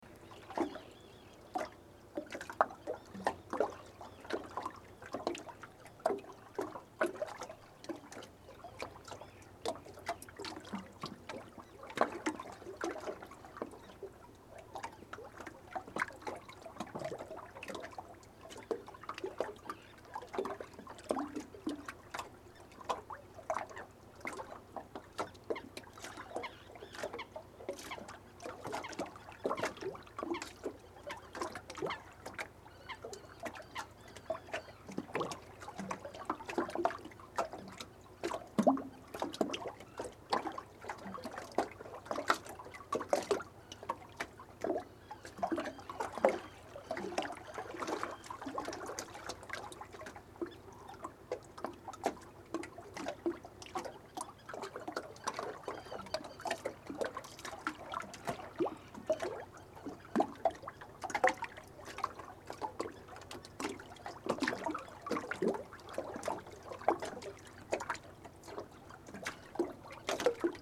Lithuania, Dusetos, on the brewery pontoon
pontoob footbridge at the local brewery
16 May